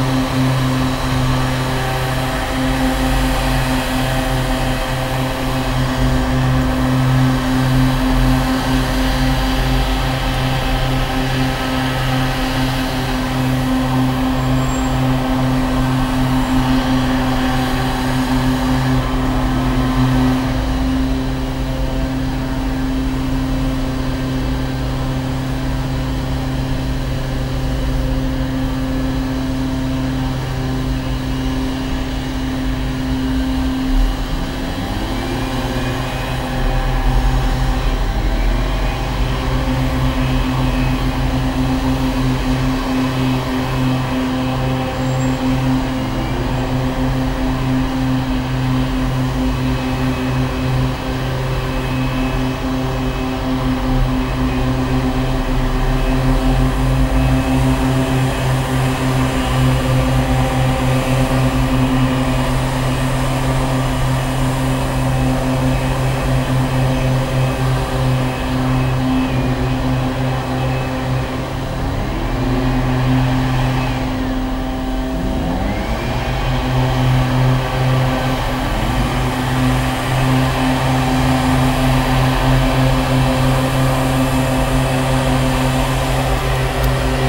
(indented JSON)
{
  "title": "paris, noisiel, allee boris vian, air blower",
  "date": "2009-10-13 16:02:00",
  "description": "two street workers clean the market place with air blower in the early morning\ninternational cityscapes - social ambiences and topographic field recordings",
  "latitude": "48.84",
  "longitude": "2.61",
  "altitude": "96",
  "timezone": "Europe/Berlin"
}